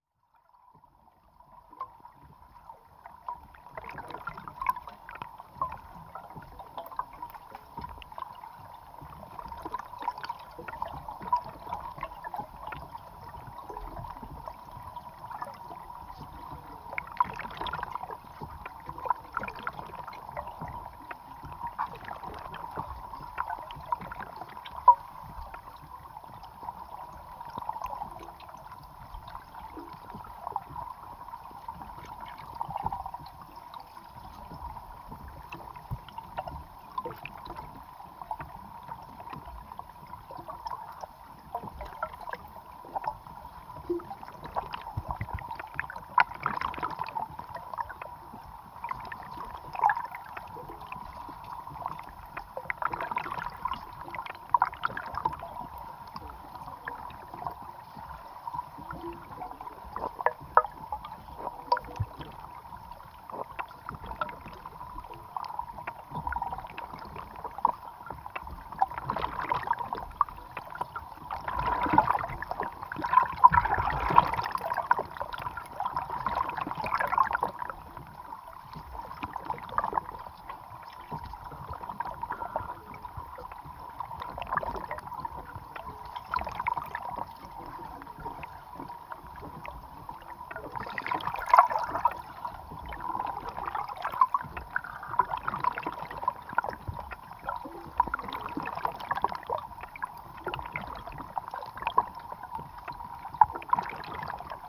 Ventė Cape, Lithuania, underwater
Hydrophone recording from the pier